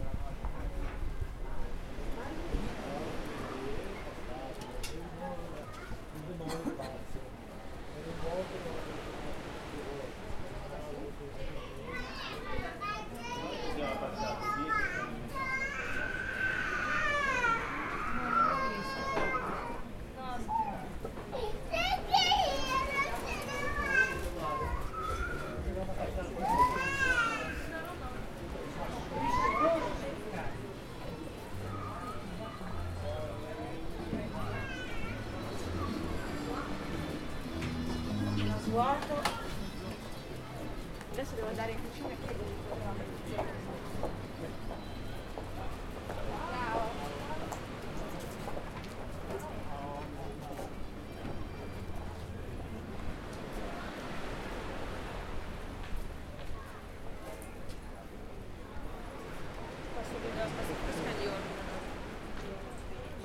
Camogli Genua, Italien - Flanieren und Leben geniessen
Spaziergang entlang der Küste, vorbei an Baren mit Musik und Menschengruppen im Gespräch. Der Duft von Meer und feinen Speisen in der Luft.
Camogli Genoa, Italy